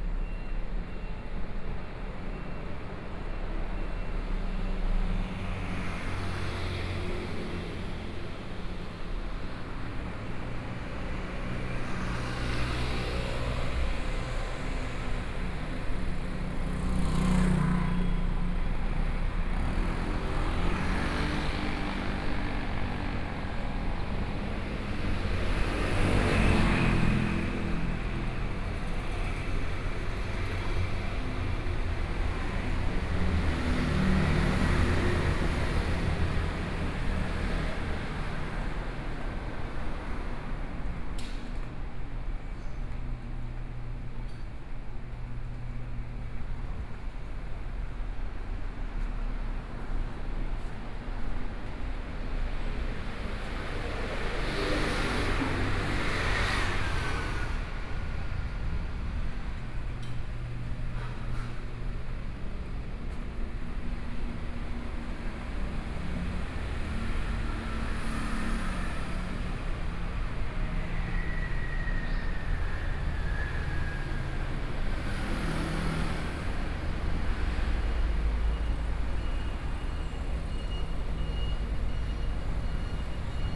Zhongzheng Rd., Changhua - walk in the Street
Traffic Noise, Passing homes and shops, Binaural recordings, Zoom H4n+ Soundman OKM II
Changhua County, Taiwan, 8 October 2013